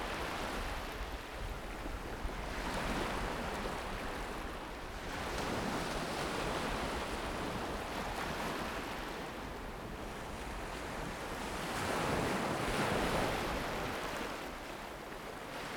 Koksijde, Belgium - This is the sound of SEA
Recording made during a walk from the trainstation to the beach of Oostduinkerke. recorded, edited and mixed by Eline Durt and Jelle Van Nuffel